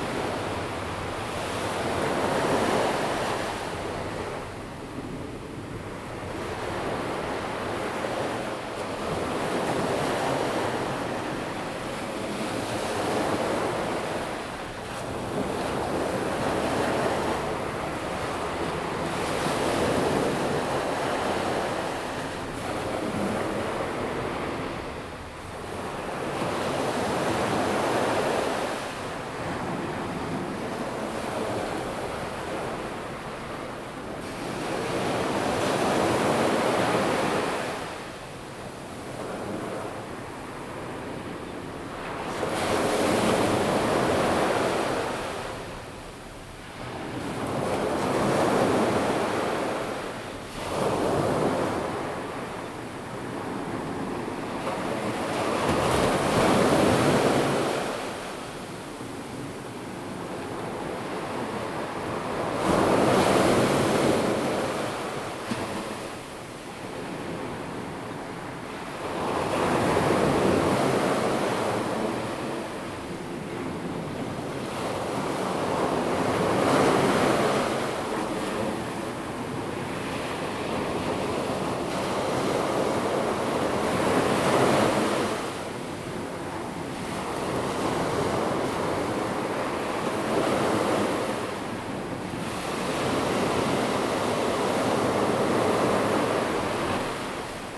Qianshuiwan Bay, Sanzhi Dist., New Taipei City - Sound of the waves
Big Wave, Sound of the waves
Zoom H2n MS+H6 XY